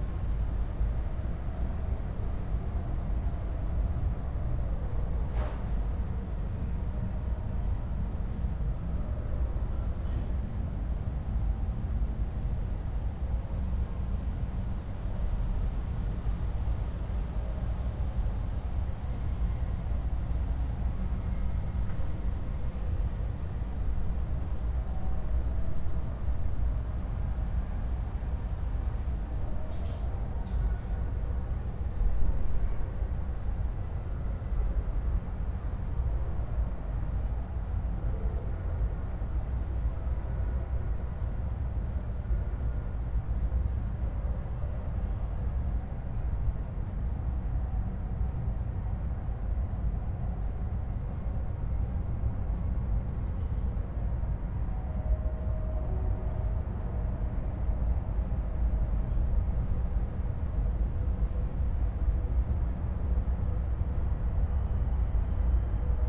Berlin Bürknerstr., backyard window - distant Mayday demonstration drone
1st of May demonstration drone from afar
(Sony PCM D50, Primo EM172)
Berlin, Germany, May 2017